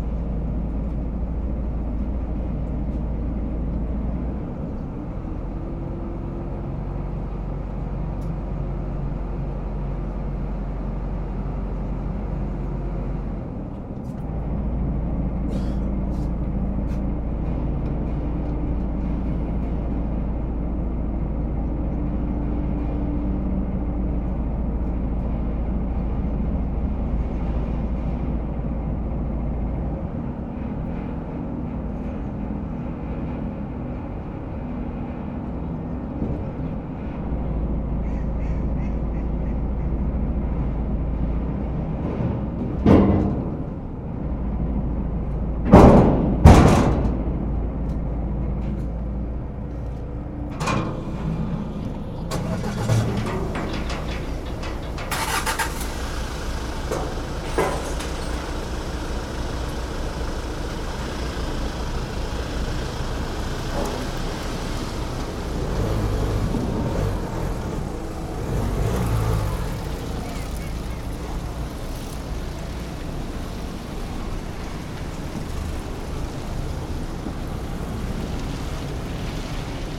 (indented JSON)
{
  "title": "Dresden Fährstelle Kleinzschachwitz, Dresden, Deutschland - Car and passenger ferry Pillnitz",
  "date": "2013-08-07 16:02:00",
  "description": "Car and passenger ferry Pillnitz\nwith Olympus L11 recorded",
  "latitude": "51.01",
  "longitude": "13.86",
  "altitude": "109",
  "timezone": "Europe/Berlin"
}